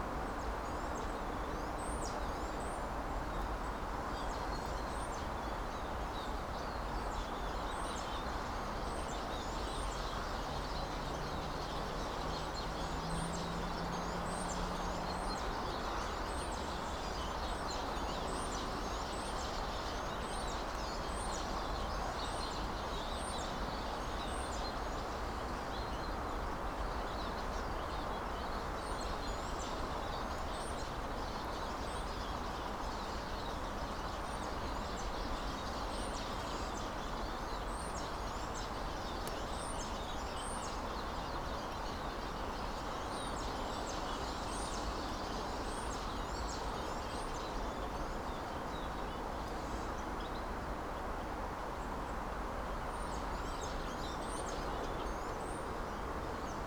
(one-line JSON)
{"title": "Berlin Buch, Deutschland - Erlenzeisige / Eurasian siskin", "date": "2022-01-23 13:29:00", "description": "(Sony PCM D50)", "latitude": "52.63", "longitude": "13.46", "altitude": "51", "timezone": "Europe/Berlin"}